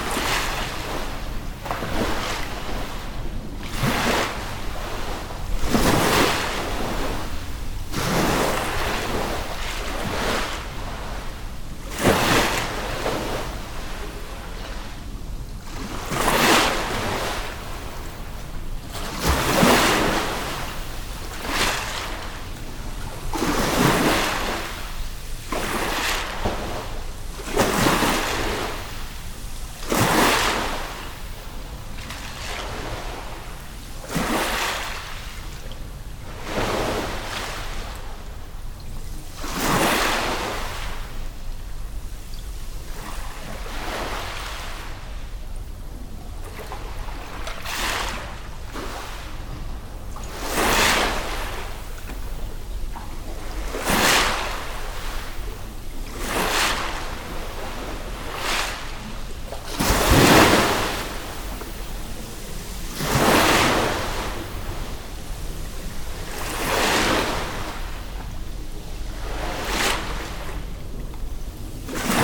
yokohama, harbour park, sea waves
At the harbour park in the night. Sea waves under a small passenger bridge. Mild wind on a warm summer night.
international city scapes - topographic field recordings and social ambiences
28 June 2011, 17:30